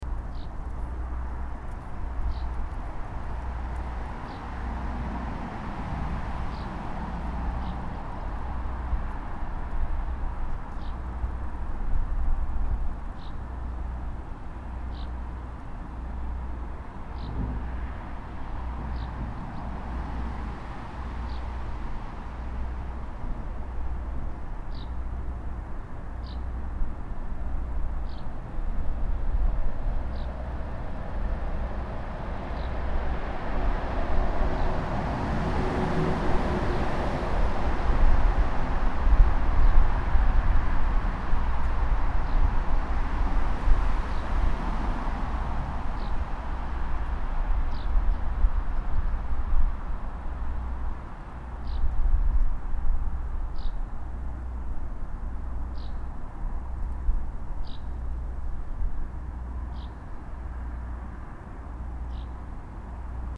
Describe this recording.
Listening to the soundscape at the recycling centre. Great drone/hum from Irish cement across the road. #WLD2018